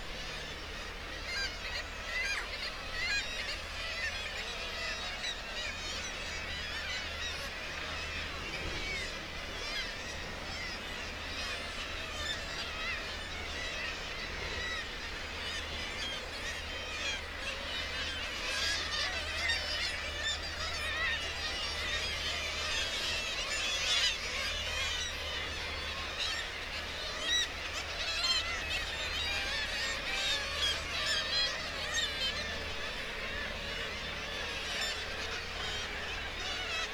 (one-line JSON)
{"title": "Bempton, UK - Kittiwake soundscape ...", "date": "2016-07-22 05:43:00", "description": "Kittiwake soundscape ... RSPB Bempton Cliffs ... kittiwake calls and flight calls ... guillemot and gannet calls ... open lavalier mics on the end of a fishing landing net pole ... warm sunny morning ...", "latitude": "54.15", "longitude": "-0.17", "altitude": "57", "timezone": "Europe/London"}